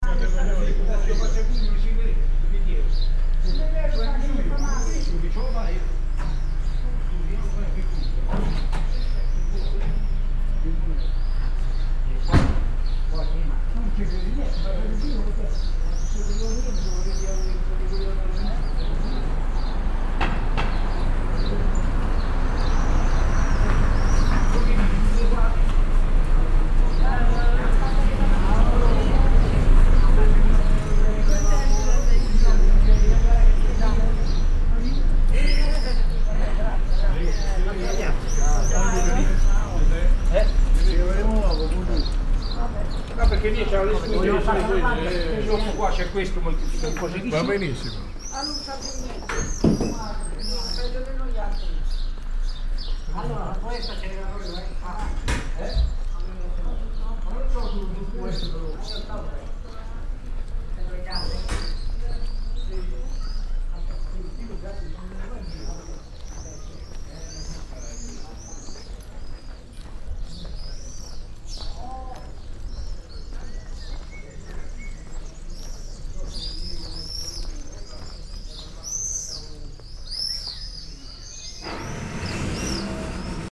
Ambience of a quite active street where people are doing small work and some passing and chatting, birds.
(Binaural: Dpa4060 into Shure FP24 into Sony PCM-D100)